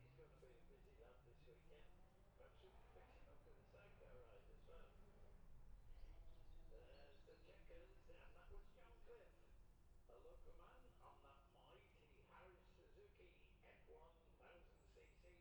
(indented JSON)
{
  "title": "Jacksons Ln, Scarborough, UK - olivers mount road racing ... 2021 ...",
  "date": "2021-05-22 11:03:00",
  "description": "bob smith spring cup ... classic superbikes practice ... dpa 4060s to Mixpre3 ...",
  "latitude": "54.27",
  "longitude": "-0.41",
  "altitude": "144",
  "timezone": "Europe/London"
}